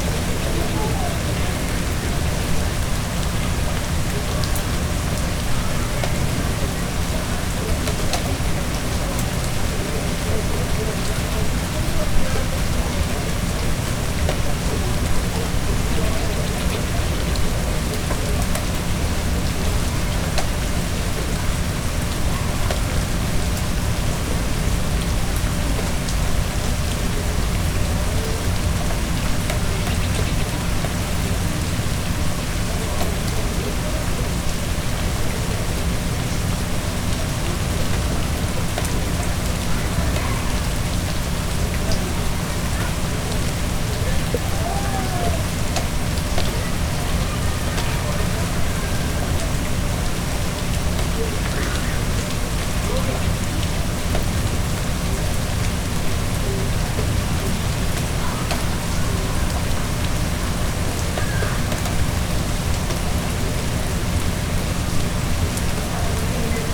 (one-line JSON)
{"title": "West Point Grey Academy, Vancouver, BC, Canada - Rivière atmosphérique", "date": "2022-01-12 11:58:00", "description": "Une pluie constante consume les dernières traces de glace, résidu d'un Noël anormalement blanc.", "latitude": "49.27", "longitude": "-123.20", "altitude": "59", "timezone": "America/Vancouver"}